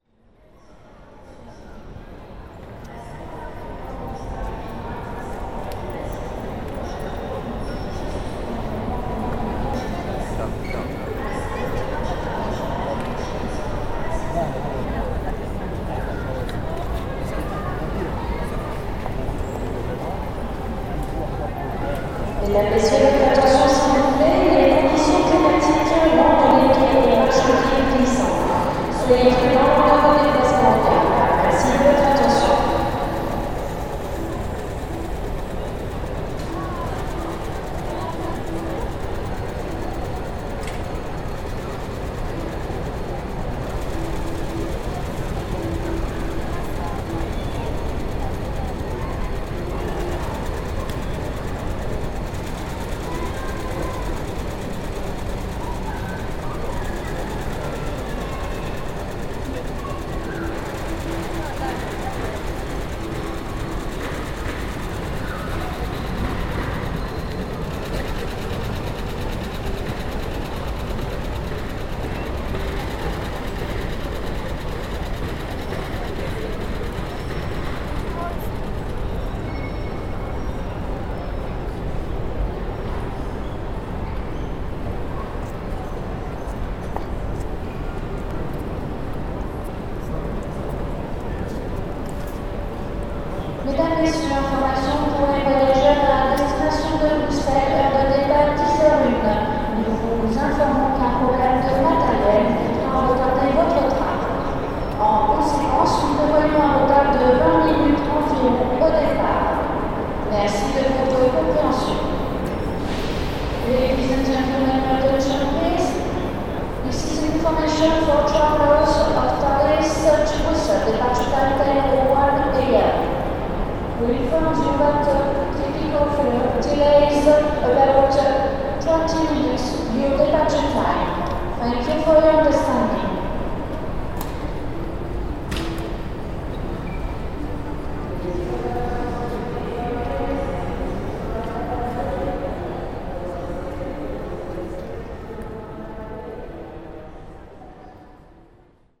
St Vincent de Paul, Paris, France - Late trains
Announcement of late trains, because of complicate weather conditions. You can hear the enormous schedule panel, which sadly had been destroyed and replaced with anonymous and disgracious small TV.
5 January 2015, ~10:00